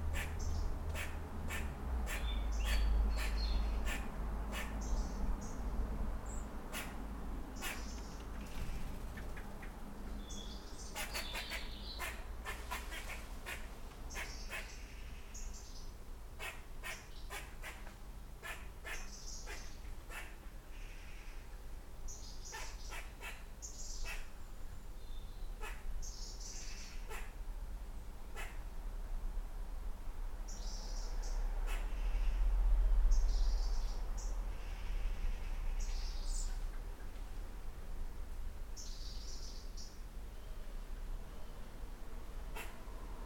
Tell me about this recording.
Recorded with LOM Mikro USI's, and a Sony PCM-A10.